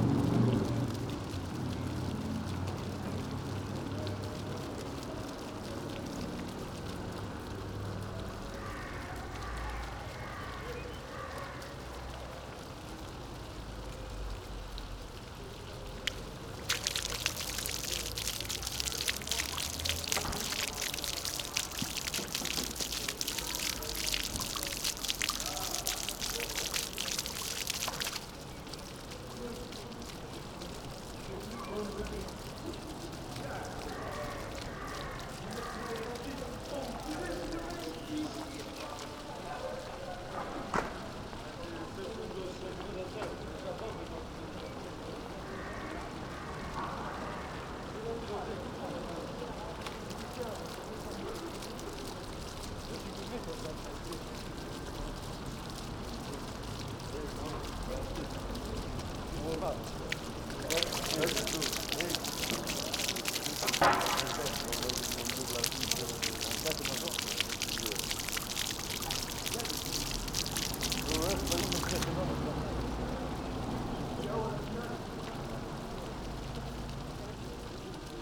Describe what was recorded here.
Intermittent fountain at Place Hotel de Ville, Rue de l'Alzette. River Alzette flows under this street of the same name, maybe these fountains are a reminiscense on the hdden river. (Sony PCM D50)